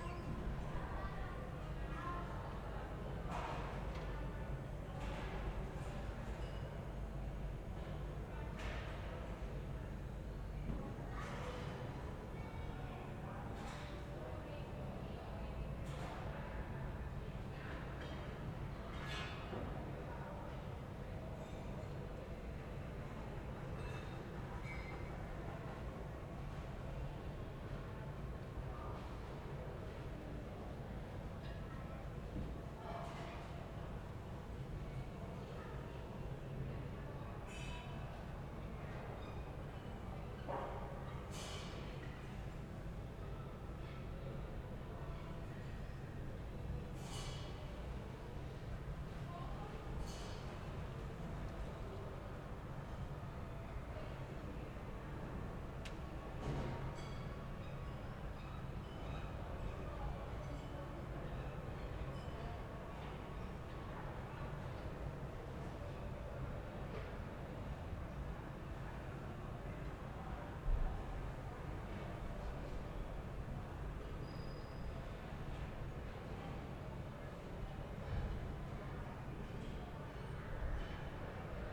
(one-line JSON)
{"title": "Ascolto il tuo cuore, città. I listen to your heart, city. Several chapters **SCROLL DOWN FOR ALL RECORDINGS** - Sunday afternoon with passages of photo reliefs plane in the time of COVID19 Soundscape", "date": "2020-05-03 12:55:00", "description": "\"Sunday afternoon with passages of photo reliefs plane in the time of COVID19\" Soundscape\nChapter LXV of Ascolto il tuo cuore, città. I listen to your heart, city.\nSunday May 3rd 2020. Fixed position on an internal (East) terrace at San Salvario district Turin, ffity four days after emergency disposition due to the epidemic of COVID19.\nStart at 0:55 p.m. end at 2:09 p.m. duration of recording 01:14:32", "latitude": "45.06", "longitude": "7.69", "altitude": "245", "timezone": "Europe/Rome"}